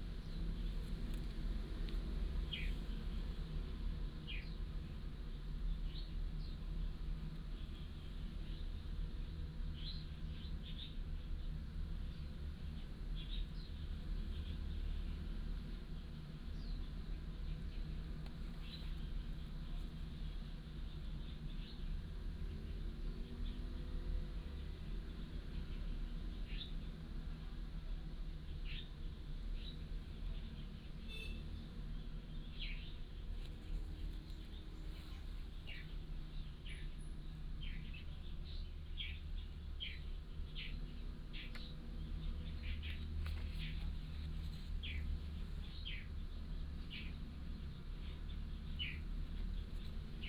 杉福村, Hsiao Liouciou Island - Birds singing
Birds singing, Inside the rock cave
Liouciou Township, Pingtung County, Taiwan